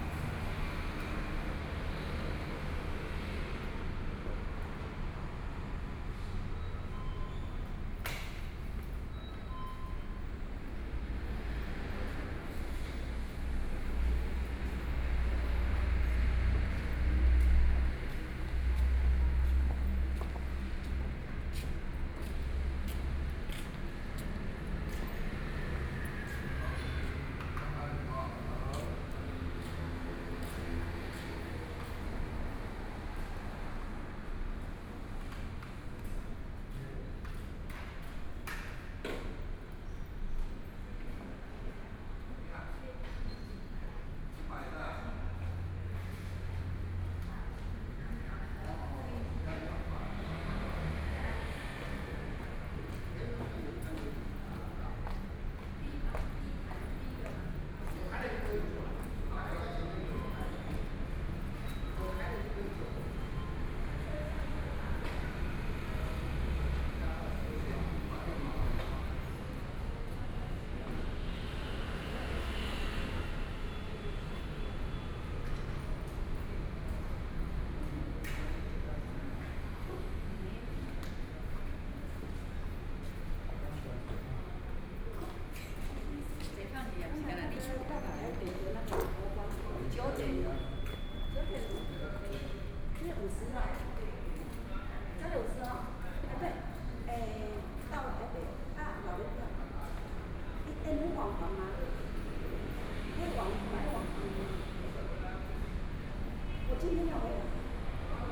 新竹客運苗栗總站, Miaoli City - Station hall
in the Bus Transfer Station, Zoom H4n+ Soundman OKM II